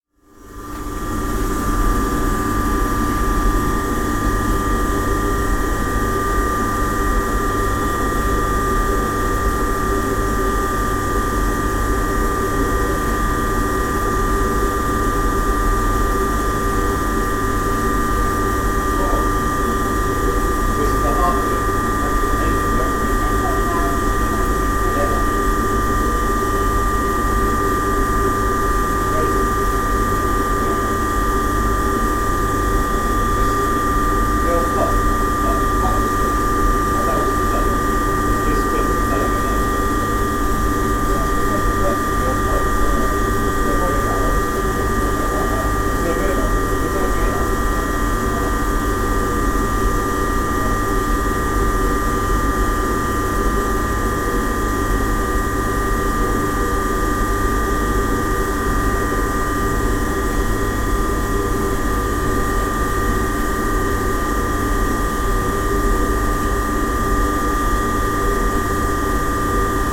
Jacksons of Reading basement, Jacksons Corner, Reading, UK - The engine of the Lamson pneumatic change chute system in the basement of Jackson's department store
Jacksons of Reading was a family-owned department store in Reading opened in 1875 by Edward Jackson. The store was kept in the family, and traded goods to the public until December 2013. After its closure, in January 2014, all of the old shop fittings and fixtures - including the last fully operational pneumatic change chute system in the UK - were offered up for sale by public auction. Folk were invited to enter the store to view all the lots in advance of the "everything must go" sale auction on Saturday 4th January, 2014. The viewing and the auction provided opportunities to explore all the hidden corners and floors of the shop which were closed off while Jacksons was still trading. This is the drone of the engine in the basement which powered the Lamson Engineering pneumatic change chute system (installed in the 1940s); the Lamson change chute system was bought for £900 by the man who has maintained it for the last 20 years.
West Berkshire, UK, 3 January